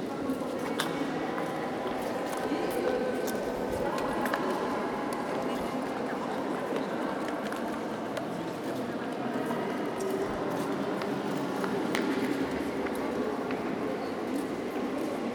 Église des Jacobins, Place des Jacobins, Toulouse, France - Fiddle player & inside église des Jacobins
I first walked past a man playing the fiddle in the street, then entered the hall of the church. This was recorded on the European Heritage day, so entrance to all museums was free.
Recorded with zoom H1, hi-pass filter used in Audacity to reduce wind noise.